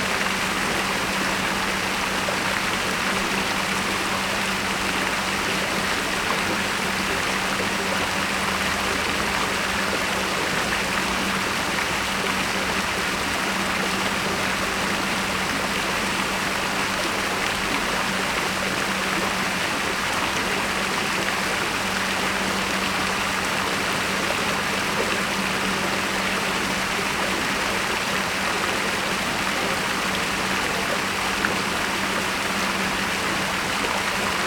Bassin square Fleuriot de l'Angle (2)
Square Fleuriot de lAngle à Nantes ( 44 - France )
Bassin jet horizontal
26 March 2011, 14:21